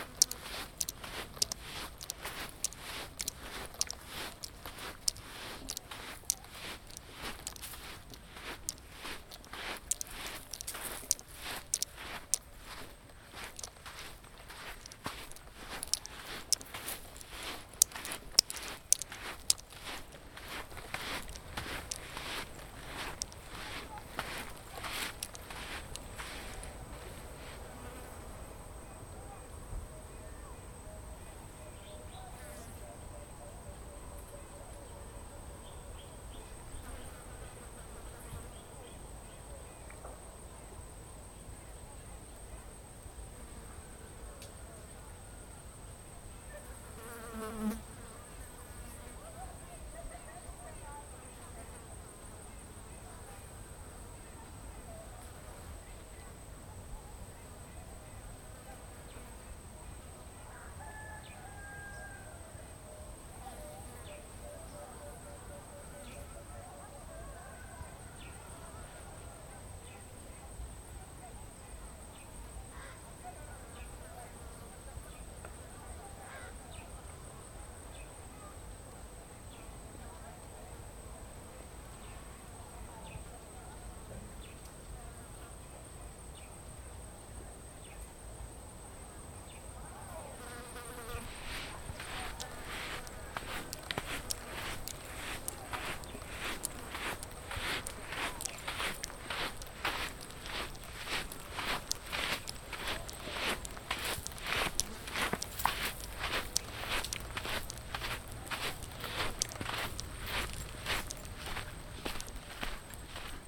Binga, Zimbabwe - Walking sound through the bush...
… part of my daily walk through the bush, from Binga Centre, passed Binga High, up to Zubo office...
(...still testing the H2N, we got for the women…. Well, for soundscape recordings at least, a handle of sorts will be advisable…)